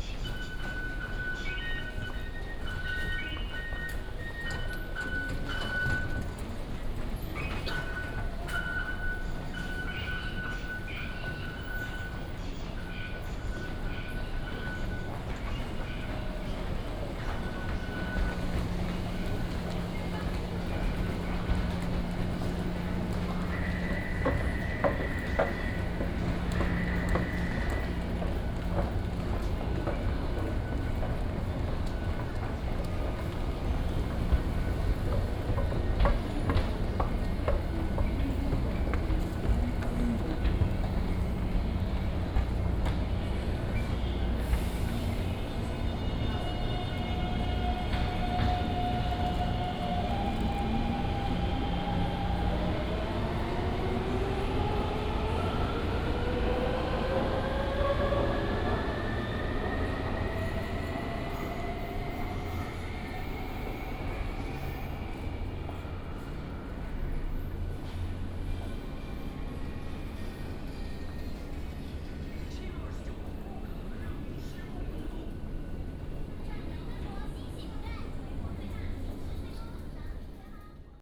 Xinpu Station, Banqiao District - walking into the MRT station
walking into the MRT station
Please turn up the volume a little. Binaural recordings, Sony PCM D100+ Soundman OKM II